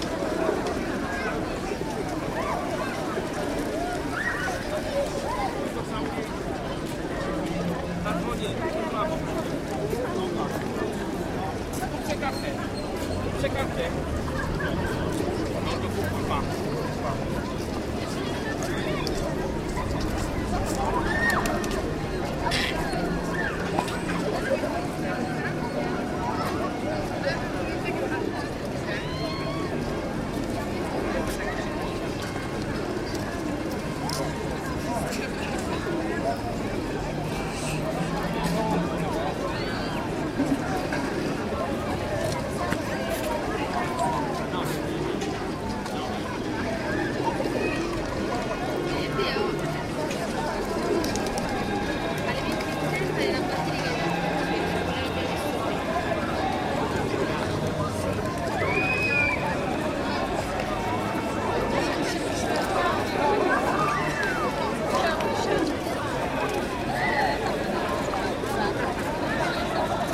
dzielnica I Stare Miasto, Cracovie, Pologne - RYNECK trompette
Crowdy holliday afternoon on the historical square of Krakov. At six p.m, everyday, a trompet player blows 4 times (once towards each cardinal direction) from the top of the cathedral’s tower. If the one toward the square can be clearly heard, the three others get more or less lost in the crowd’s rumor, but yet not completely.